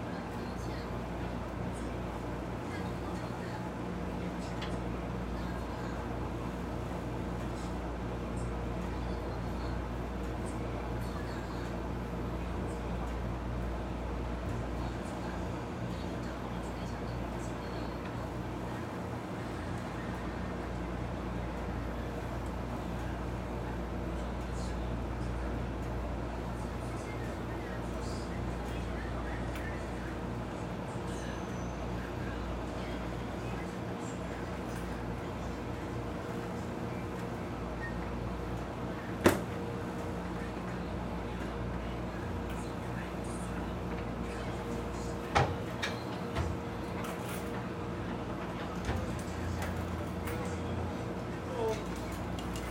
Catalpa Ave, Flushing, NY, USA - Tina's Laundromat
Laundromat ambience in Ridgewood, Queens.
United States, 6 March 2022